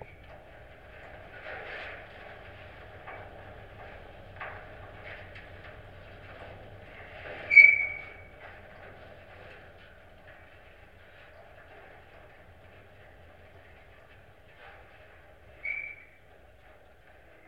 Utena, Lithuania, metalic water tower
abandoned metalic watertower from soviet era. contact microphones placed on its body
Utenos apskritis, Lietuva